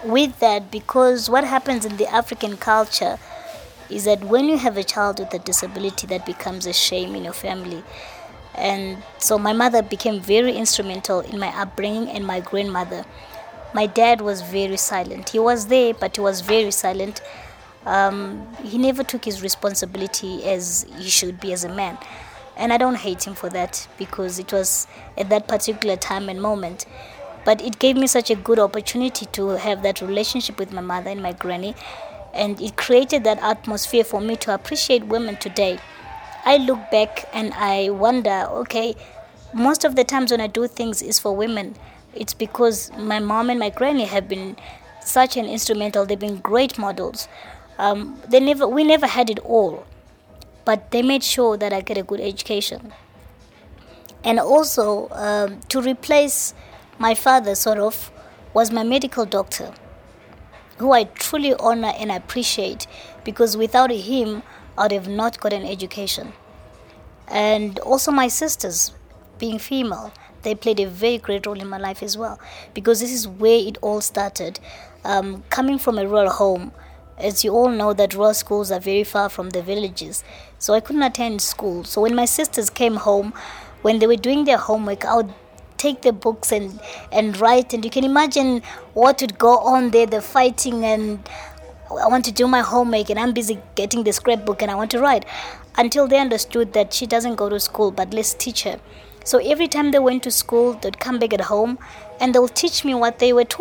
Makokoba, Bulawayo, Zimbabwe - Soneni Gwizi talking life…
And here’s the beginning of the interview with Soneni, the beginning of her story….
27 October 2012